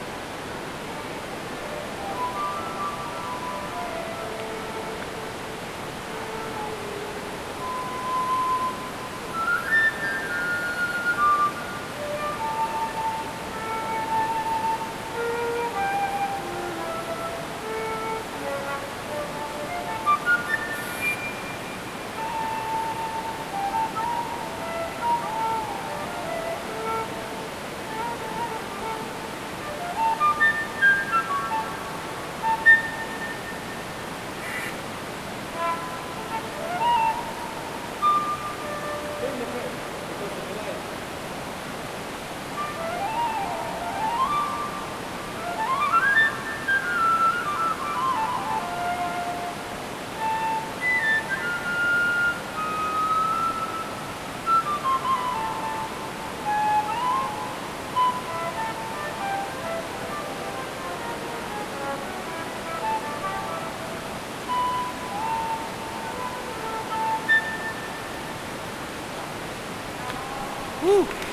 Pazinska Jama, Pazin, Hrvatska - Prehistoric rock
Inside of a cave a stream is runing. A musician plays a fulte underground.